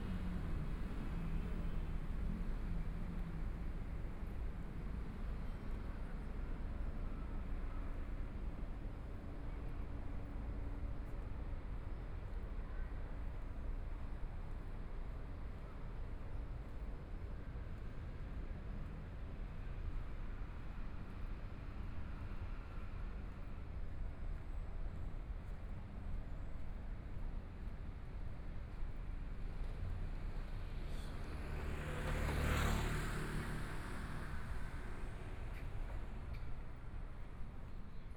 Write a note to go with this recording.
Walked across the park from convenience store, Traffic Sound, Binaural recordings, Zoom H4n+ Soundman OKM II